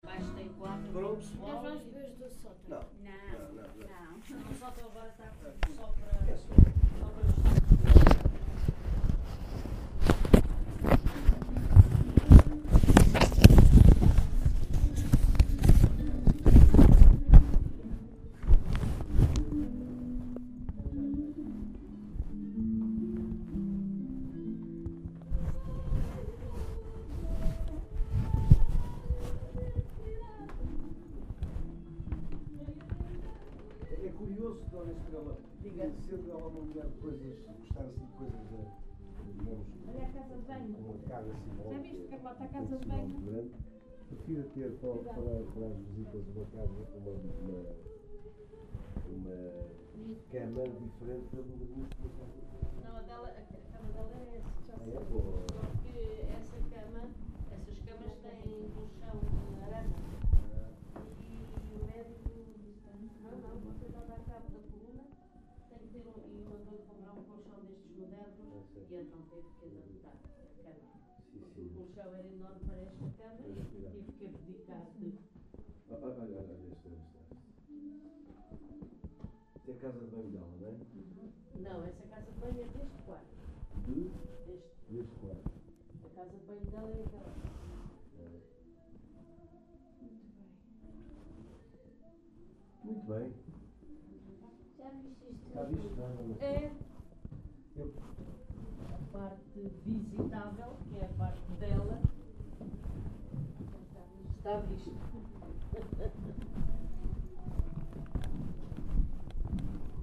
Tour at Fundacao Amalia Rodrigues Casa Museu